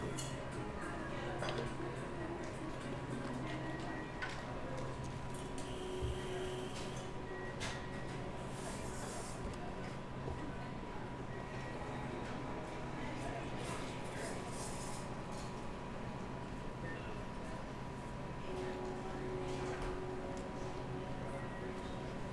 Boston Logan Airport - Sitting Outside Starbucks
Sitting outside the Starbucks in Terminal B. Starbucks was the only thing open at that early hour meanwhile a line started to form at the nearby Dunkin waiting for them to open